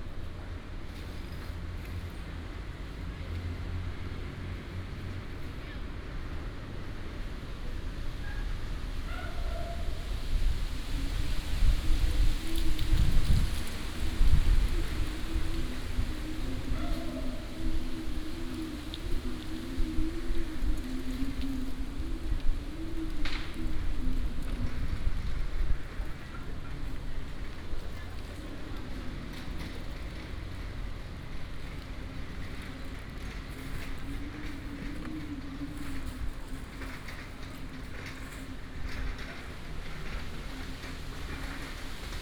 Walking in the Park, wind and Leaves, Dog, Binaural recordings, Sony PCM D100+ Soundman OKM II